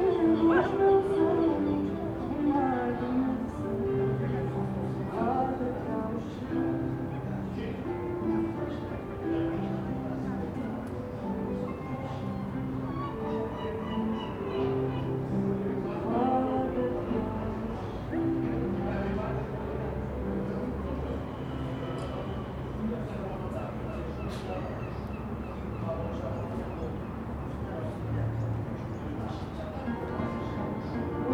Cais da Ribeira, Porto, Portugal - Ribeira do Porto - Fado
Ribeira do Porto - Fado Mapa Sonoro do Rio Douro Douro River Sound Map